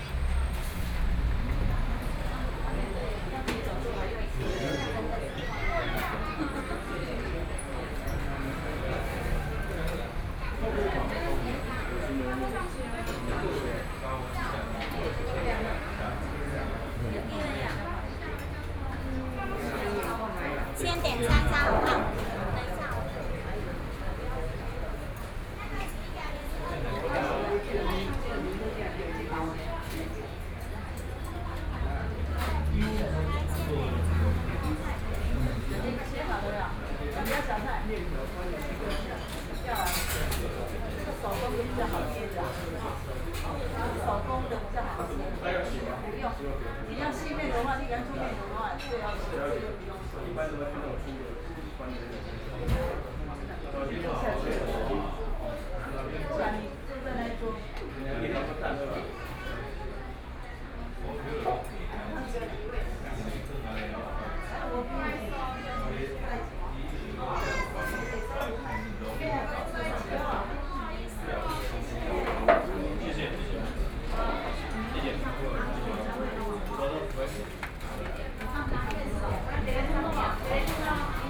三星蔥牛肉麵館, Jiaoxi Township - In the restaurant
In the restaurant, Traffic Sound
Sony PCM D50+ Soundman OKM II
21 July 2014, ~20:00